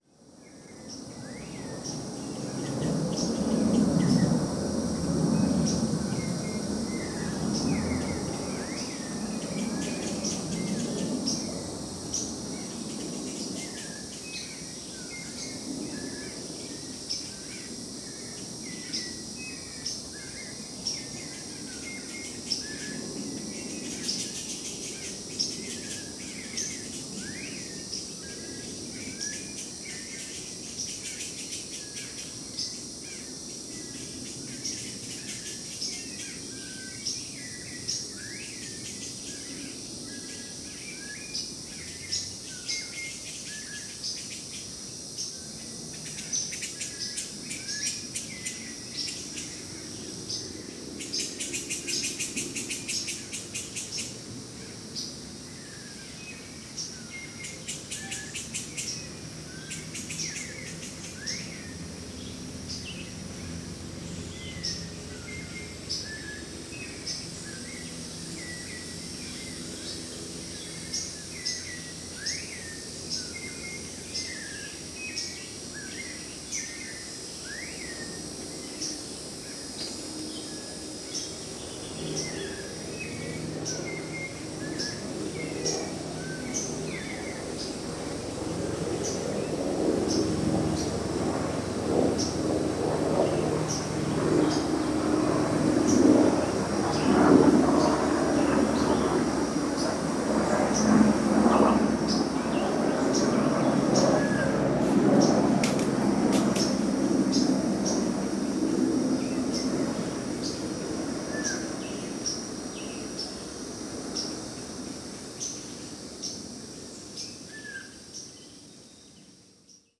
Vila Santos, São Paulo - State of São Paulo, Brazil - Trilha das Figueiras - i
In the initial hiking path one can hear the antrophony felt at the place as well the variety of birds inhabiting the place.
São Paulo - SP, Brazil